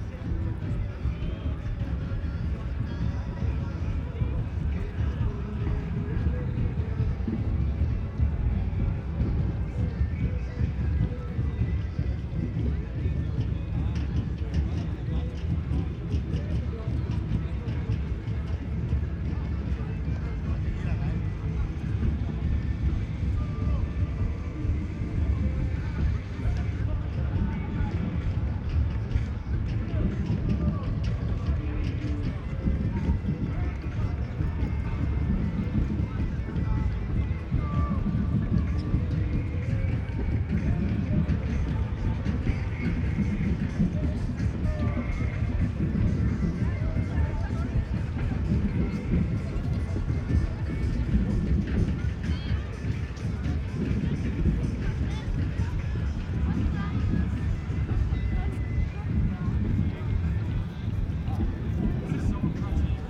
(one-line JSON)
{"title": "Bundestag, Berlin, Deutschland - sound of demonstration", "date": "2018-12-01 15:50:00", "description": "Bundesplatz, near Bundestag, distand sounds of a demonstration about climate change and aginst brown coal, fossile fuel etc.\n(Sony PCM D50, Primo EM172)", "latitude": "52.52", "longitude": "13.37", "altitude": "35", "timezone": "GMT+1"}